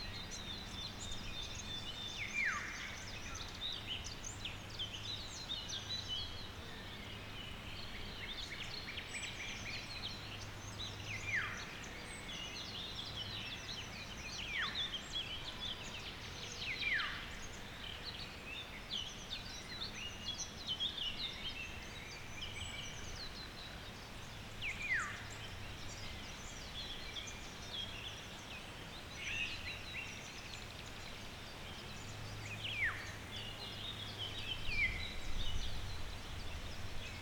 Schönhausen, Elbe, Kolonnenweg - floodplain ambience
ambience at Elbe river floodplain, birds and white noise from leaves in the wind. this was the former border to east germany, all the cart tracks are made for up to 40 tons of weight, for tanks and other military use.
(SD702, Audio Technica BP4025)
Schönhausen (Elbe), Germany, 19 May 2012